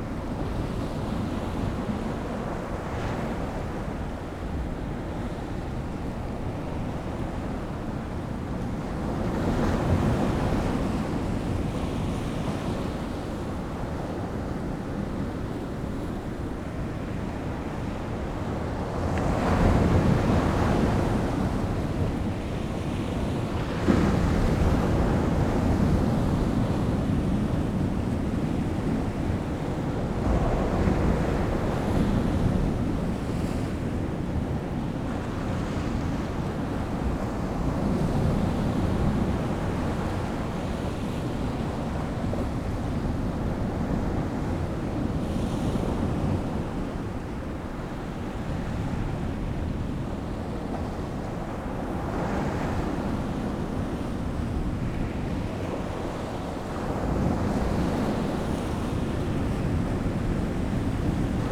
Bamburgh Lighthouse, The Wynding, Bamburgh, UK - incoming tide ...
incoming tide ... lavalier mics clipped to a bag ... in the lee of wall ... blowing a hooley ...